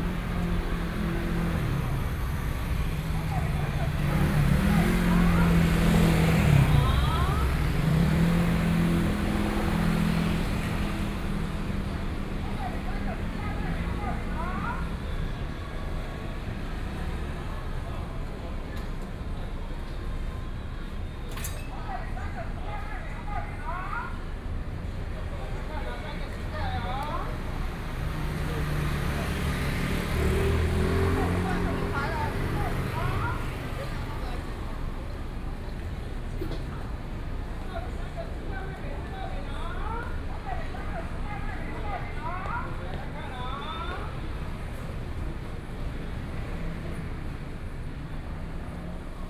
Jianguo Rd., Jungli City, Taoyuan County - Selling sound
Selling sound, Traffic noise, Sony PCM D50 + Soundman OKM II
Zhongli City, Taoyuan County, Taiwan, 16 September 2013, 15:30